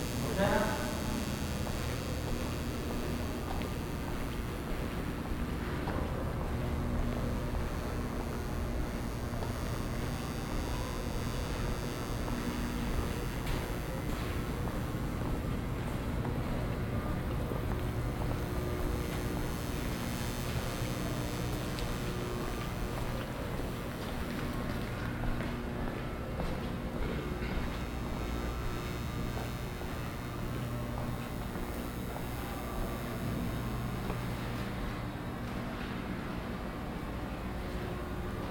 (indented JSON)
{
  "title": "Dragon City Mall, Calgary, AB, Canada - Dragon City",
  "date": "2015-12-08",
  "latitude": "51.05",
  "longitude": "-114.06",
  "altitude": "1062",
  "timezone": "America/Edmonton"
}